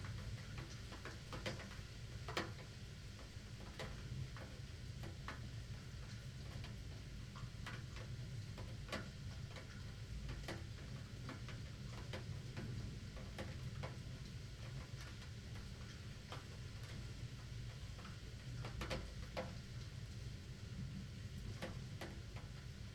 berlin, friedelstraße: backyard window - the city, the country & me: backyard window, thunderstorm
thunderstorm, rain, recorder inside of a double window
the city, the country & me: may 26, 2009
99 facets of rain